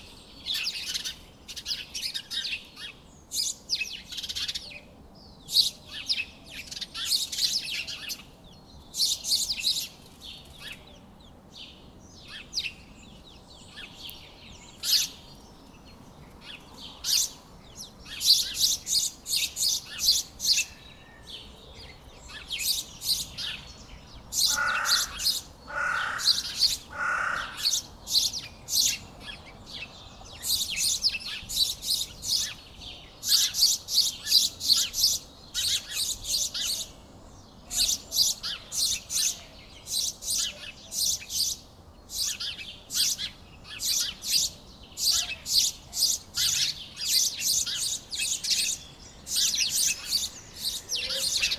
Mont-Saint-Guibert, Belgique - Noisy sparrows
On the morning, noisy sparrows are playing on a tree, a train is passing and very far, the sound of the bells ringing angelus.